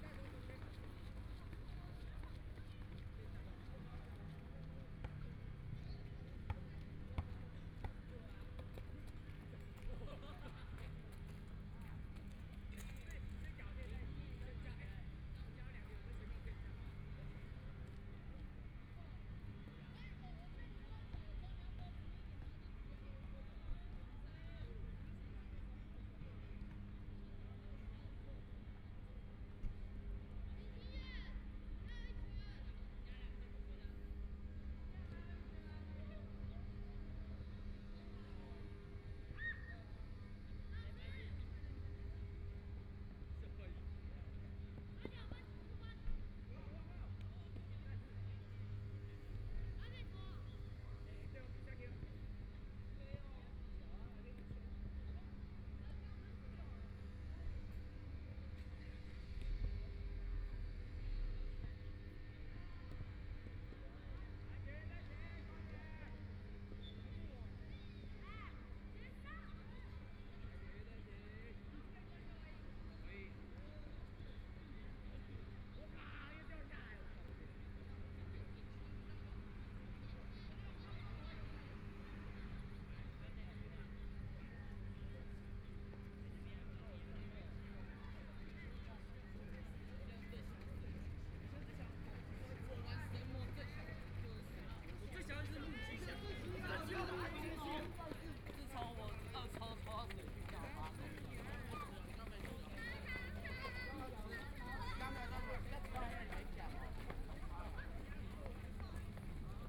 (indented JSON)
{
  "title": "花崗山綜合田徑場, Hualien City - Running voice",
  "date": "2014-02-24 14:34:00",
  "description": "Students of running activities, Mower noise, Birds sound\nBinaural recordings\nZoom H4n+ Soundman OKM II",
  "latitude": "23.98",
  "longitude": "121.61",
  "timezone": "Asia/Taipei"
}